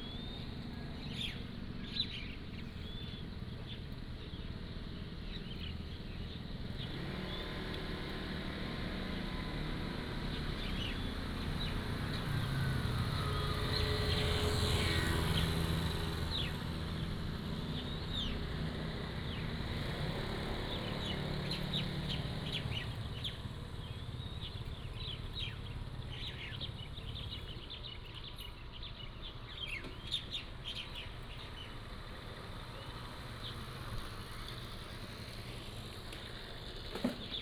Wujiangbeiti Rd., Jincheng Township - In the street
In the street, Birds singing, Traffic Sound
福建省, Mainland - Taiwan Border, 4 November